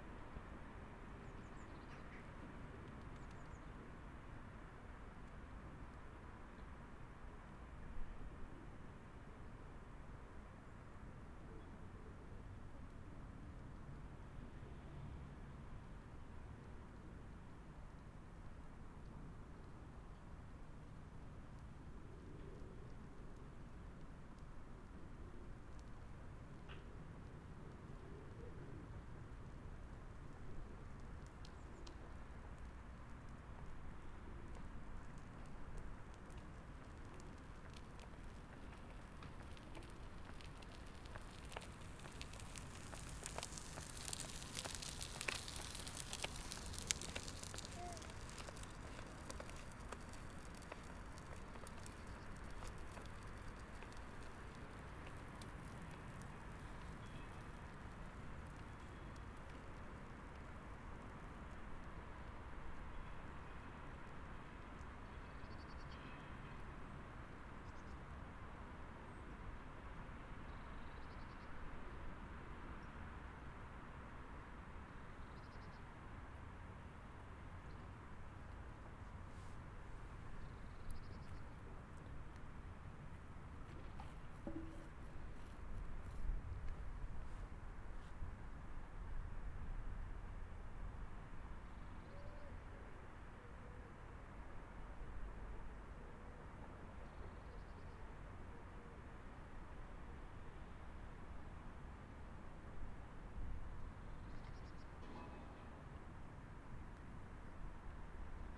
Caputsteenpark, Mechelen, België - Caputsteenpark
[Zoom H4n Pro] Small park next to the Mechelen jail. Fragments of a conversation between a woman in the park and her husband behind the jail walls.